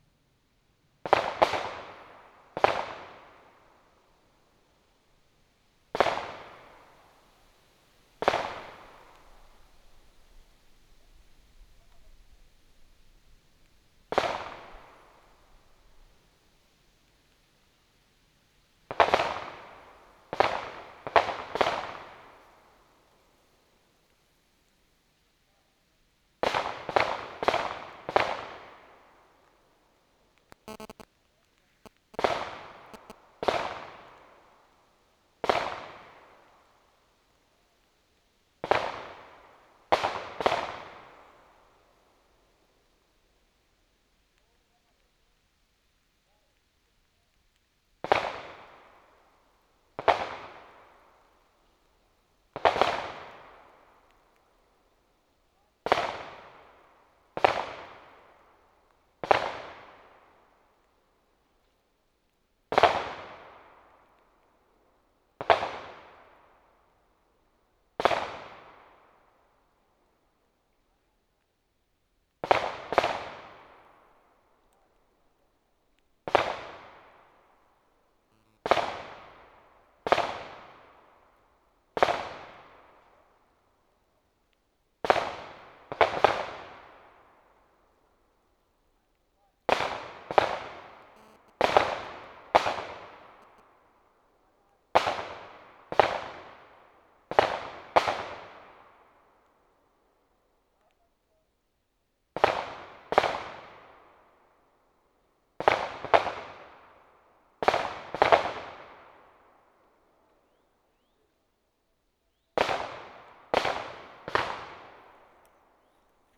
Schiessplatz im Reinhardswald, leichter Sommerregen und mein Telefon
gemeindefreies Gebiet, Germany